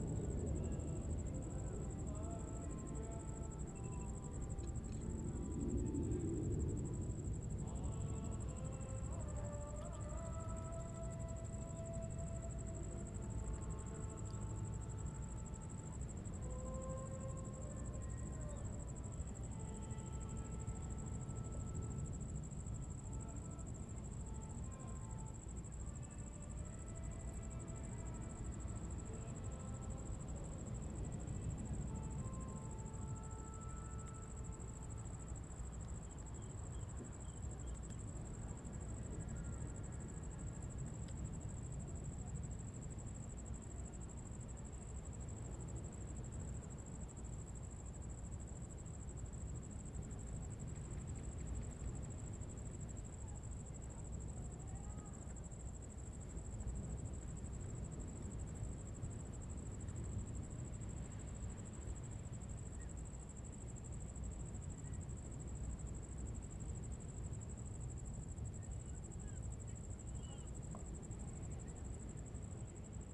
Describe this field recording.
Waterfront Park, Beach at night, The sound of aircraft flying, Zoom H2n MS + XY